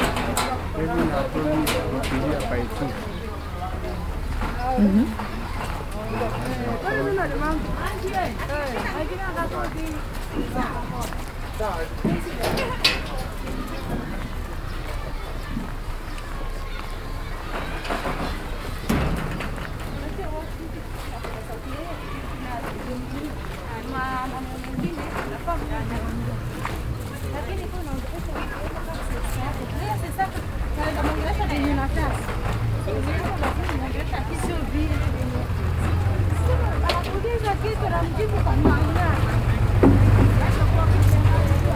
We had been visiting “Jah Army”, a local youths group in Kibera with Ras Jahil from Pamoja FM, and Gas Fyatu from Rhyme FM; walking back now through narrow alley-ways and along market stalls towards Pamaja studio….